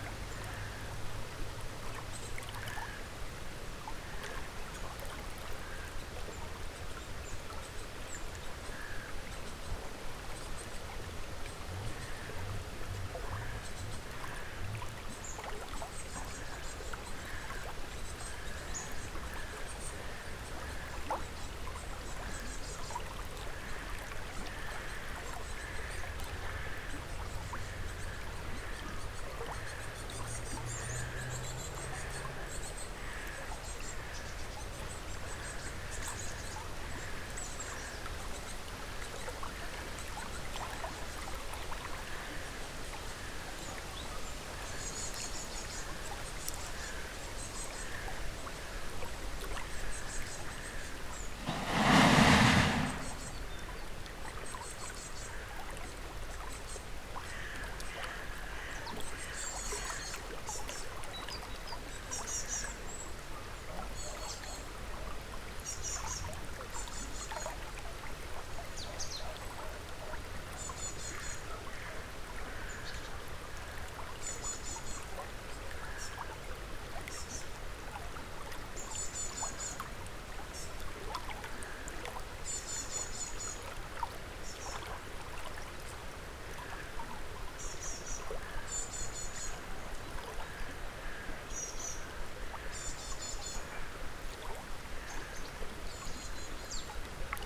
Russia, Leningrad Oblast, river Voytolovka - rivervoytolovka
Riverside of Voytolovka. Waterflow, crows and other birds, occasional trains and planes.
Recorded with Zoom H5